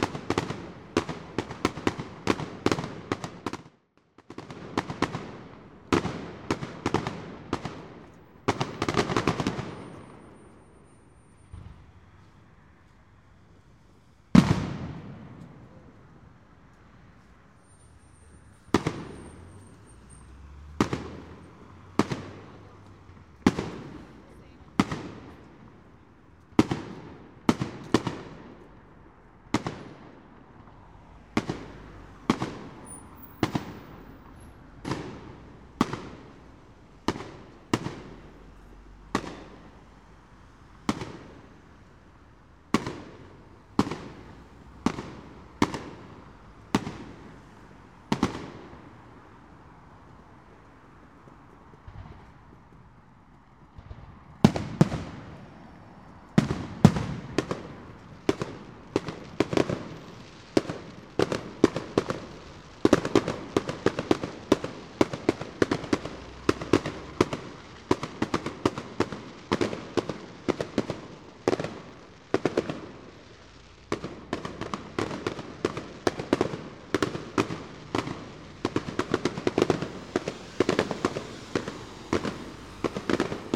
London Borough of Haringey, Greater London, UK - Fireworks, North Hill, London N6
Recorded using Roland CS10EM Binaural Mics into a Zoom H4n